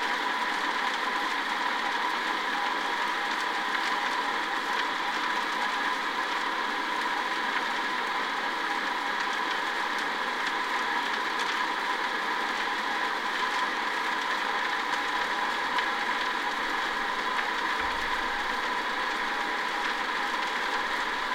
Dubingiai, Lithuania, lake Asveja underwater
hydrophone recording of lake/river Asveja. there's construction works of new bridge, so probably we hear some pump or something...